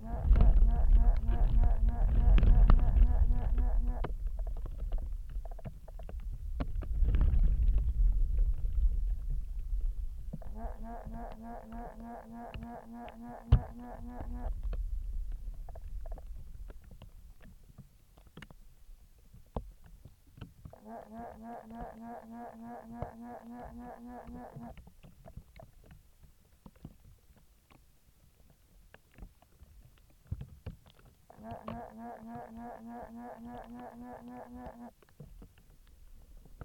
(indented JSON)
{
  "title": "Mar Lodge Estate, Braemar, UK - hiding",
  "date": "2022-06-09 16:34:00",
  "latitude": "56.99",
  "longitude": "-3.55",
  "altitude": "385",
  "timezone": "Europe/London"
}